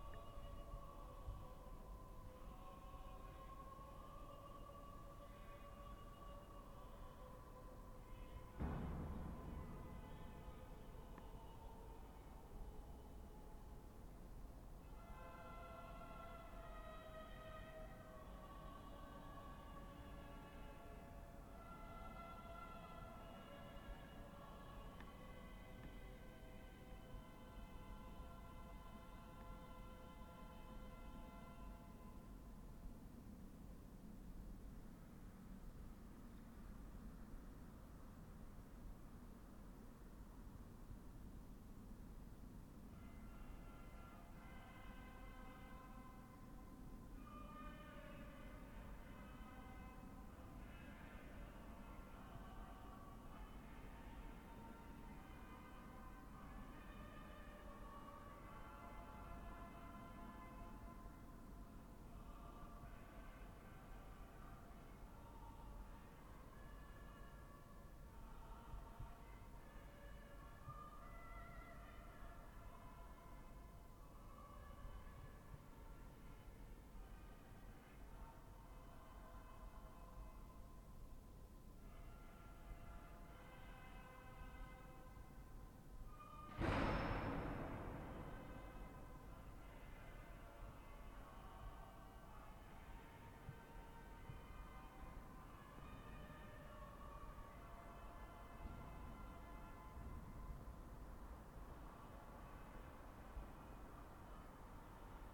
Sounds from inside the église Saint Martin